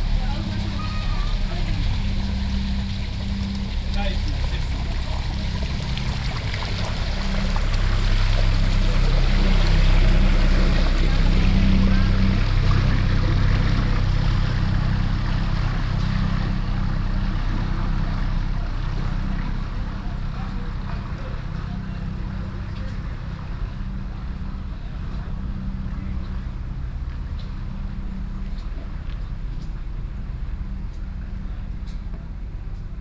bootjes komen uit het tunneltje
little boat coming out the tunnel